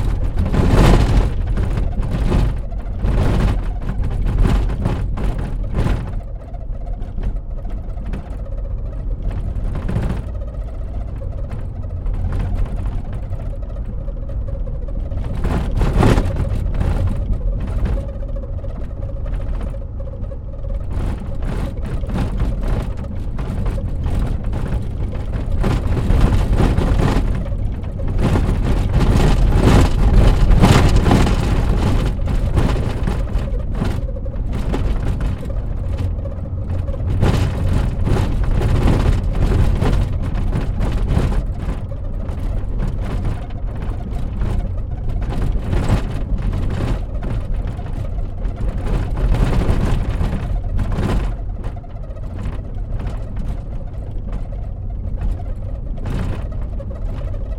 Kramfors N, Sverige - Snowy mountain drive in old Volvo
Movable recording from inside my old Volvo on snowy bumpy roads in the mountains down to a less bumpy coastland rainy urban place, and the car (which isn´t in very perfect state) made some wonderful composition by itself - so i had to record this. The actual road is the mountain road between Sollefteå and Örnsköldsvik. When the recording is starting we´re somewhere around Gålsjö and when it is stopping we´re in Örnsköldsvik city by the seacoast. The climate changes as the vehicle moves more down to the sealevel. More high up there is a lot of snow (and problems with the state of the road aswell).
Some notes written on the 3rd jan 2012:
the actual car journey was made in december, around the 8th 9th or
something thereabout - and captures a sound i have been hearing for
years in my car - - that only comes through under some special
conditions - it´s like the weather humidity is affecting the
squeeking... well you will hear - it´s not very special except this
10 December 2011, 13:30